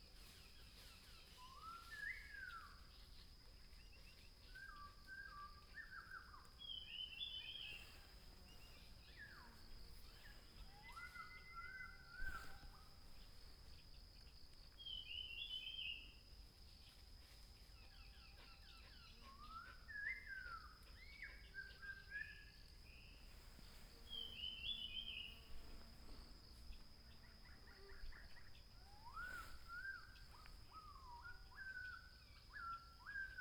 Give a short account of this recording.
Birds singing, in the woods, dog